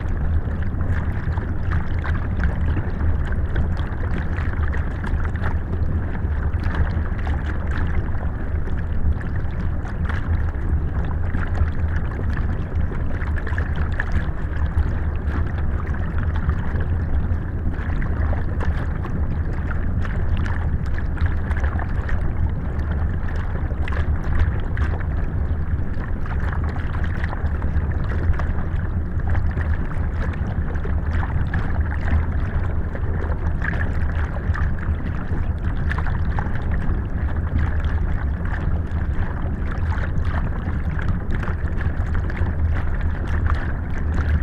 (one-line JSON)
{"title": "Lithuania, inside small dam", "date": "2021-05-15 19:45:00", "description": "Beyond white noise. Small dam recorded with two mics at once: hydrophone submerged and geophone just on earth on water line.", "latitude": "55.16", "longitude": "25.36", "altitude": "174", "timezone": "Europe/Vilnius"}